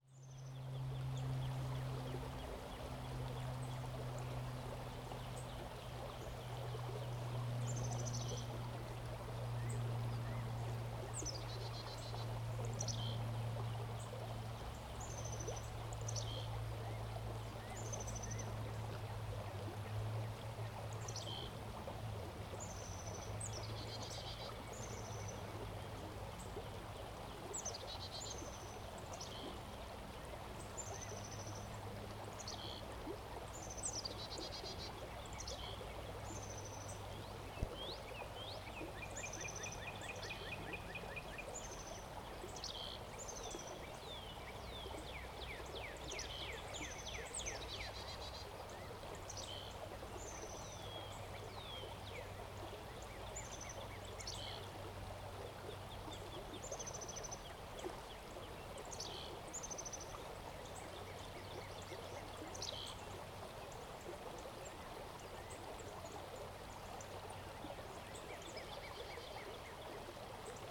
Kiefer Creek Bend, Ballwin, Missouri, USA - Kiefer Creek Bend
Evening recording at a bend in Kiefer Creek.
Missouri, United States